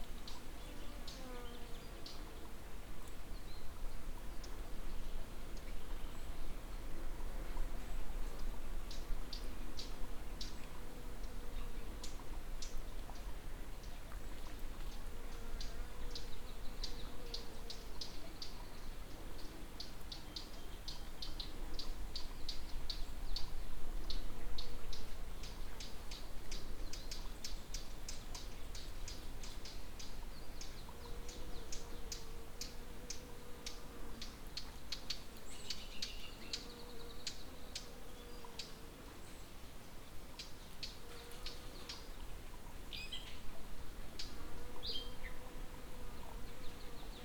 (bianaural) sharp corner of the trail. water is dugging deep depressions into the rock and flowing constantly with myriads of trickles. very peaceful atmosphere and soothing sounds of nature.
May 2015